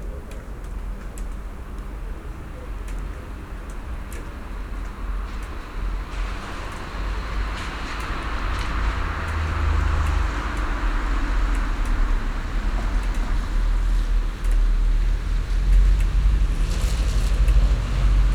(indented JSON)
{
  "title": "Karunova ulica, Ljubljana - night ambience 11pm",
  "date": "2012-11-05 23:00:00",
  "description": "church bells and night ambience at 11pm, Karunova ulica\n(Sony PCM D50, DPA4060)",
  "latitude": "46.04",
  "longitude": "14.50",
  "altitude": "297",
  "timezone": "Europe/Ljubljana"
}